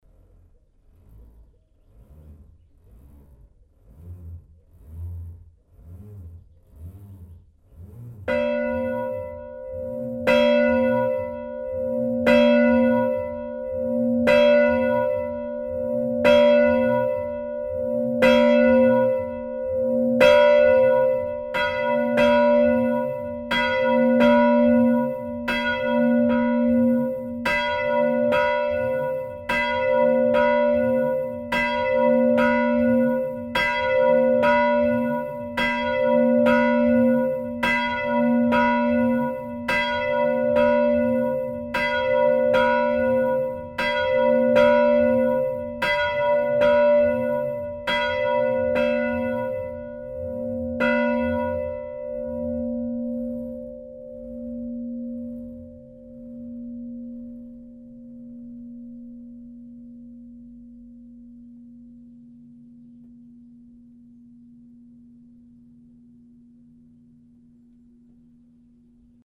Ath, Belgique - Ostiches bell
The bell of the beautiful Ostiches village. This bell is a poor quality.